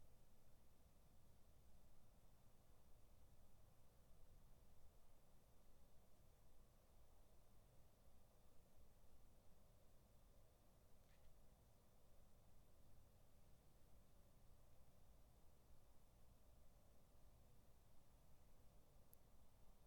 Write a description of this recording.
3 minute recording of my back garden recorded on a Yamaha Pocketrak